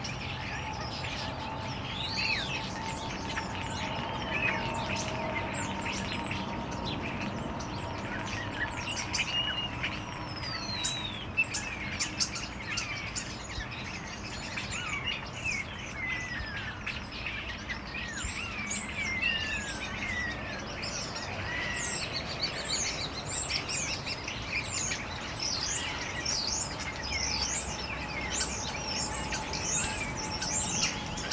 berlin treptow, allotment garden area, birds in bush, city sounds
9 October 2011, ~15:00